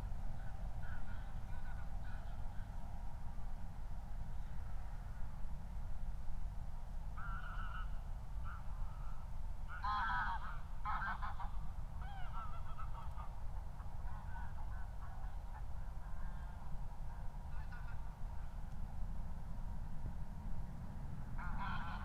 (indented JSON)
{
  "title": "Moorlinse, Berlin Buch - near the pond, ambience",
  "date": "2020-12-24 02:19:00",
  "description": "02:19 Moorlinse, Berlin Buch",
  "latitude": "52.64",
  "longitude": "13.49",
  "altitude": "50",
  "timezone": "Europe/Berlin"
}